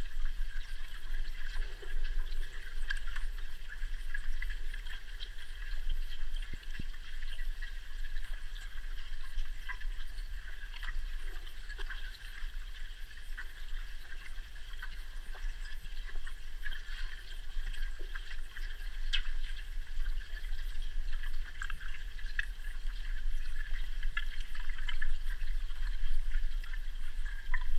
hydrophone in the city's lake. some low noise from the traffick, some motor noise from the water pump, some water insects and underwater flows
Utena, Lithuania